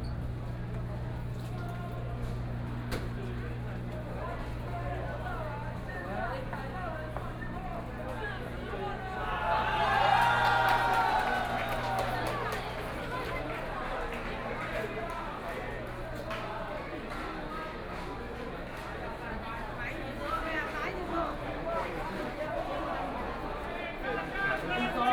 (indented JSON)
{
  "title": "Qingdao E. Rd., Taipei City - Packed with people on the roads",
  "date": "2014-03-30 14:58:00",
  "description": "Packed with people on the roads to protest government, Walking through the site in protest, People cheering, Public participation in protests will all nearby streets are packed with people, The number of people participating in protests over fifty\nBinaural recordings, Sony PCM D100 + Soundman OKM II",
  "latitude": "25.04",
  "longitude": "121.52",
  "altitude": "15",
  "timezone": "Asia/Taipei"
}